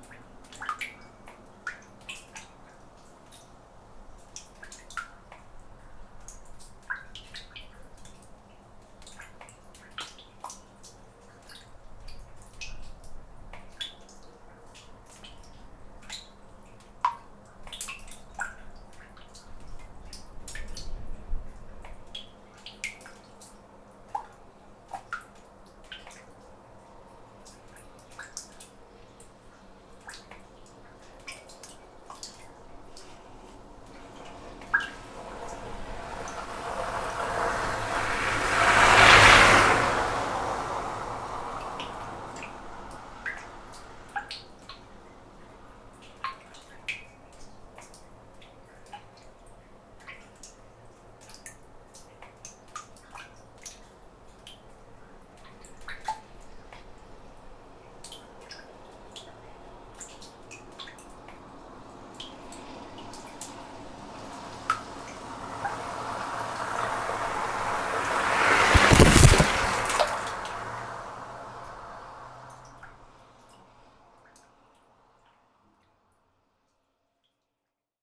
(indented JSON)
{
  "title": "Pilėnų g., Ringaudai, Lithuania - Water dripping inside manhole",
  "date": "2021-01-21 19:42:00",
  "description": "Recording of a manhole on the side of a street. Large amount of snow was melting and dripping, droplets reverberating inside. Distant highway hum and occasional passing car can also be heard. In the end, a car passes by at higher speed, smearing the microphones with snow. Recorded with ZOOM H5.",
  "latitude": "54.88",
  "longitude": "23.81",
  "altitude": "79",
  "timezone": "Europe/Vilnius"
}